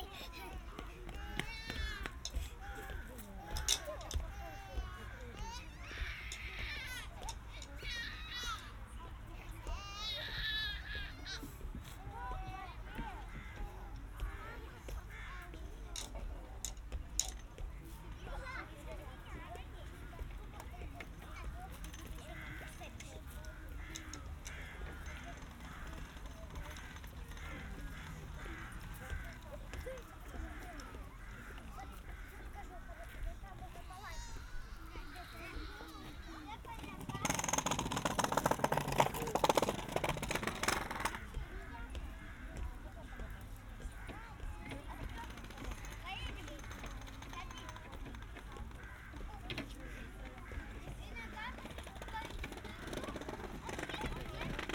Utena, Lithuania, another kids playground
Quarantine is still in action in Lithuania, however people are people and kids playgrounds are full of kids. Sennheiser ambeo headset recording.